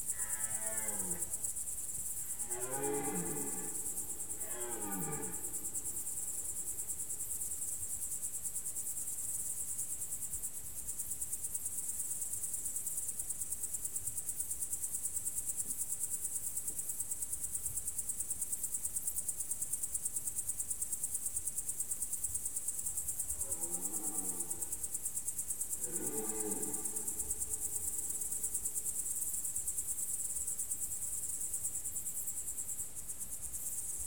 Lokovec, Čepovan, Slovenija - two deers